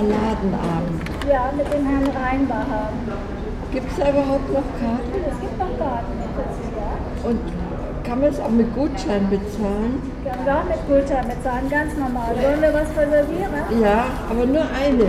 Stadt-Mitte, Düsseldorf, Deutschland - Düsseldorf, Schauspielhaus, box office
At the box office of the theatre. The sound of the amplified voice of the ticket agent and the voices of customers and other guests in the stone floor theatre entry.
soundmap nrw - social ambiences, sonic states and topographic field recordings